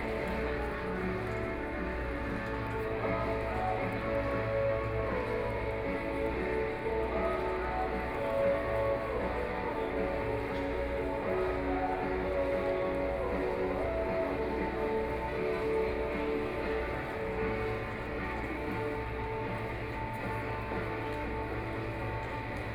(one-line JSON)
{"title": "中正區幸福里, Taipei City - Student movement", "date": "2014-04-01 13:23:00", "description": "Walking through the site in protest, People and students occupied the Legislature Yuan（Occupied Parliament）", "latitude": "25.04", "longitude": "121.52", "altitude": "9", "timezone": "Asia/Taipei"}